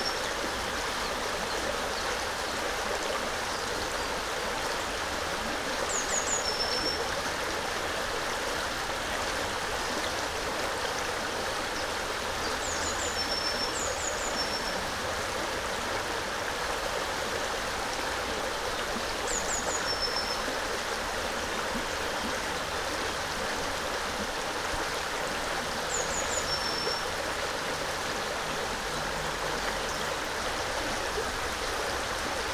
Mont-Saint-Guibert, Belgique - The river Orne
Recording of the river Orne, in a pastoral scenery.
in front of me, a nutria is swimming and after, eating on the bank. A train to Namur is passing by.